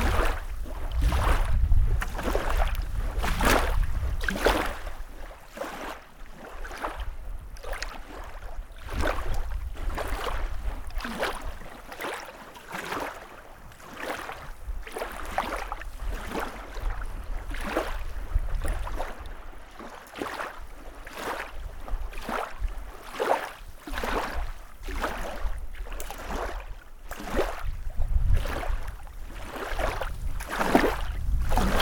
Uljaste küla, Uljaste, Ida-Viru maakond, Estonia - Waves of lake Uljaste
Waves recorded from dressing cabin and then near the water.